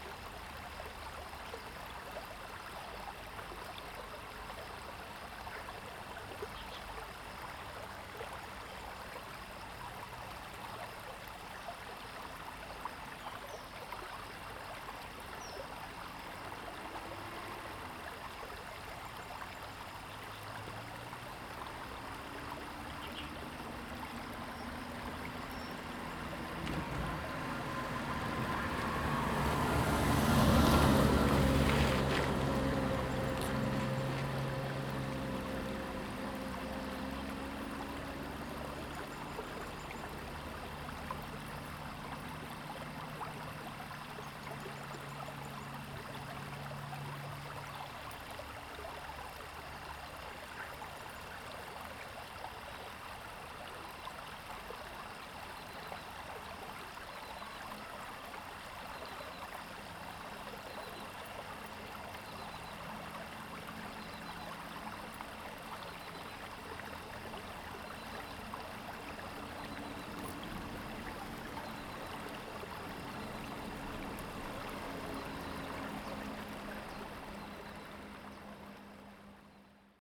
TaoMi River, 桃米里 Nantou County - Next to the stream
Bird calls, Stream sound
Zoom H2n MS+XY